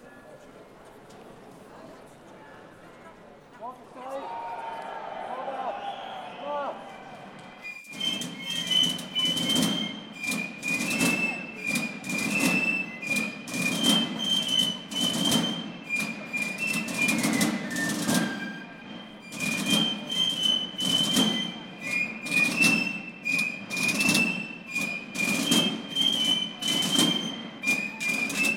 Freie Str., Basel, Schweiz - Morgestraich
Listen to the beginning of the Basler Fasnacht when at exactly 4.00 am all the street lights go out and the drummers and pipe players start marching.
Zoom H6, MS Microphone